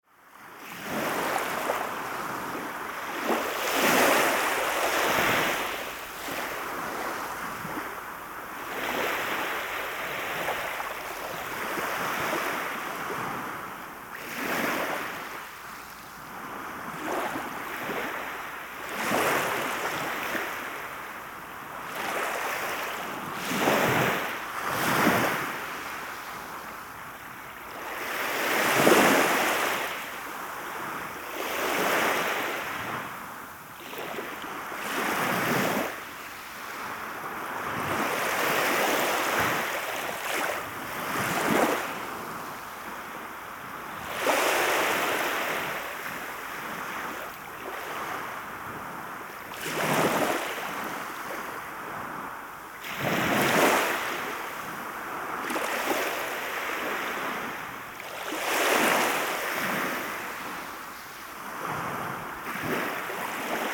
Play of the waves.
Плеск волн.